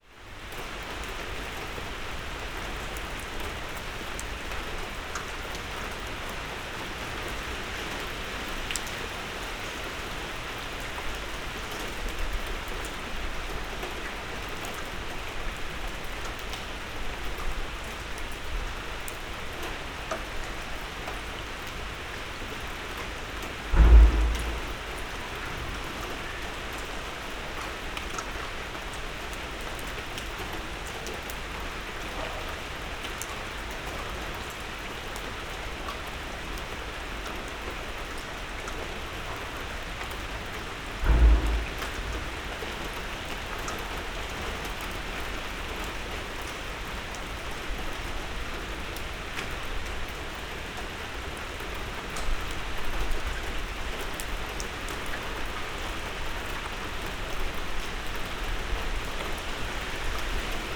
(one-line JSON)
{"title": "berlin, sanderstraße: unter balkon - the city, the country & me: under balcony", "date": "2012-06-05 02:30:00", "description": "the city, the country & me: july 17, 2012\n99 facets of rain", "latitude": "52.49", "longitude": "13.43", "altitude": "47", "timezone": "Europe/Berlin"}